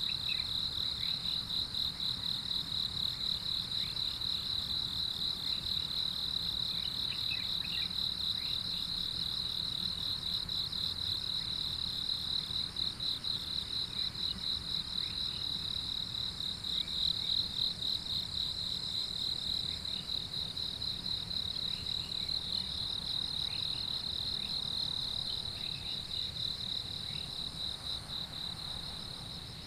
early morning, Next to the river, Insects sounds, Chicken sounds
Puli Township, 水上巷, 8 June 2016